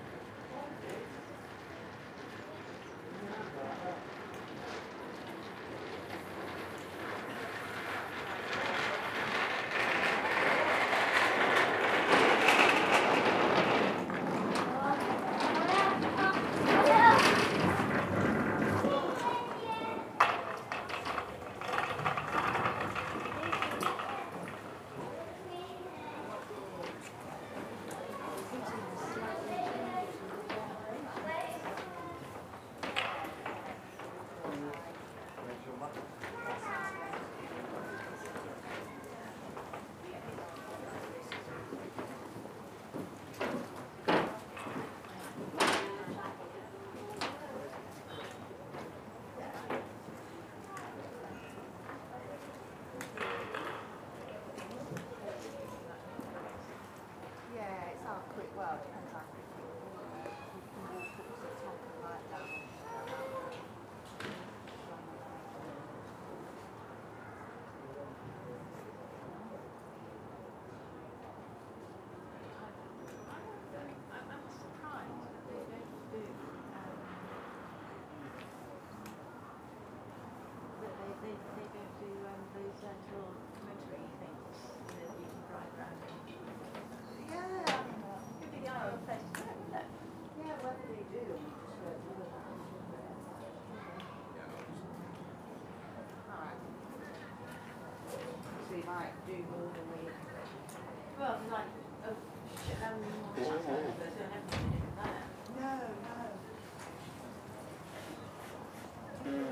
Yorkshire Sculpture Park, West Bretton, UK - Entrance to the Yorkshire Sculpture Park
Sitting above the entrance to the Sculpture Park, you can hear some strange metallic sounds as people walk over the metal grating that covers the path.
Recorded on zoom H4n
Used audacity's low-pass filter at 100Hz to reduce wind noise.
1 February 2015, 14:38